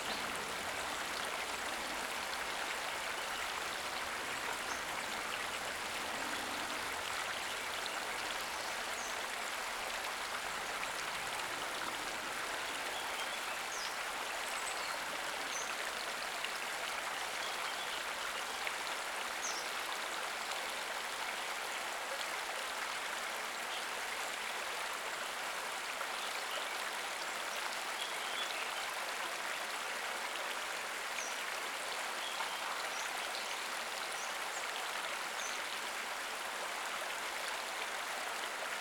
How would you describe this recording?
The thirteenth distance post in HK Trail, located at the south-west side of the Peak. You can hear the running water stream and some morning birds. 港島徑第十三個標距柱，位於太平山頂西南面。你可以聽到潺潺流水聲和晨早的鳥鳴。, #Water, #Stream, #Bird, #Plane